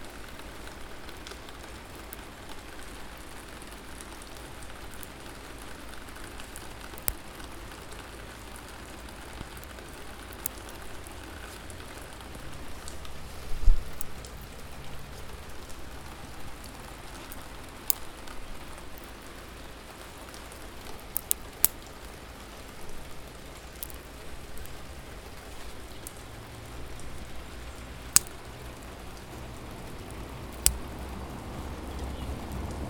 Quayside, Newcastle upon Tyne, UK - Quayside
Walking Festival of Sound
13 October 2019
Snapping twigs and taxi on cobbles. Heavy rain.